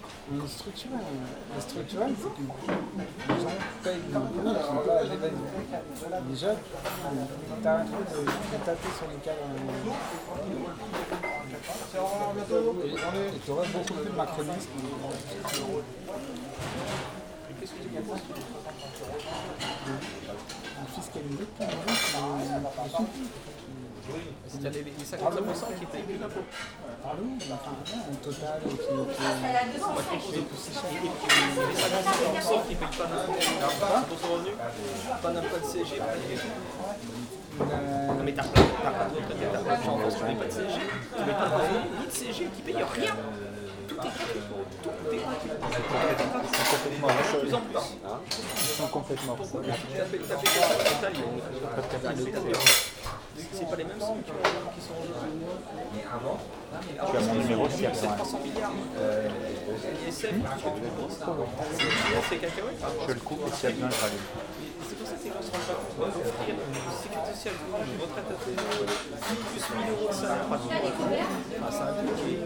Paris, France - Paris restaurant
Into a Paris restaurant, hubbub of the clients and two people talking about the actual french political problems.